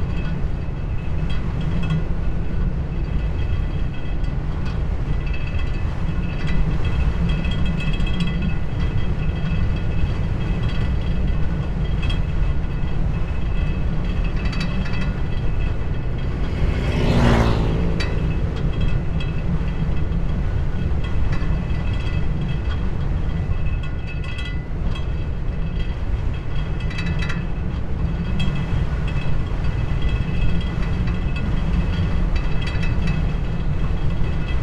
afsluitdijk: parking - the city, the country & me: vibrating fence
stormy weather, vibrating fence
the city, the country & me: july 30, 2015